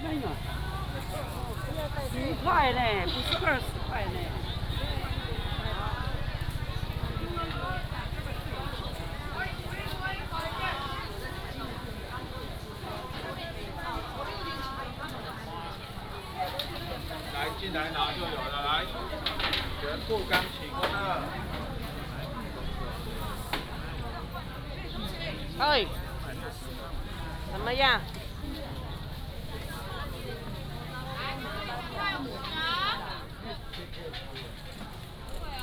Mishi St., Miaoli City - Walking in the traditional market
Walking in the traditional market, Market selling sound
Miaoli County, Taiwan, 16 February 2017, ~09:00